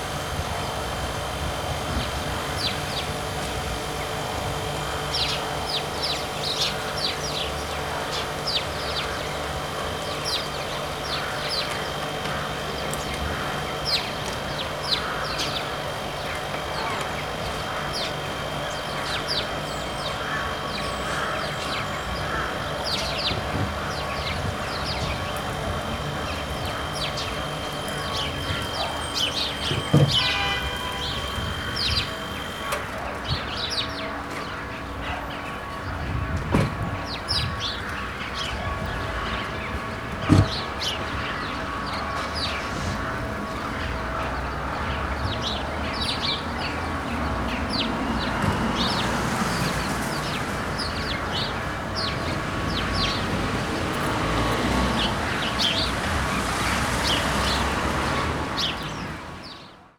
Poznan, Jana III Sobieskiego housing estate - refrigerating unit and birds
recorded at the back of a small butcher's shop. a small refrigerating unit churning buzzing and a tree bustling with sparrows to the left.
Poznan, Poland